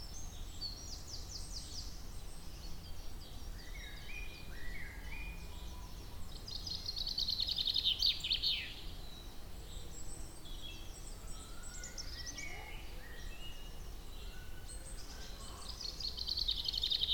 Stackpole, Pembrokeshire, UK - Listening to the birds
After a beautiful long walk with my friend Brenda, we ended up in this forest, where I stood for a while just listening to the assembled birds. It was a really sunny day. I heard wood pigeons, tits, robins and maybe also blackbirds? Recorded on EDIROL R-09 with just the onboard microphones.
9 April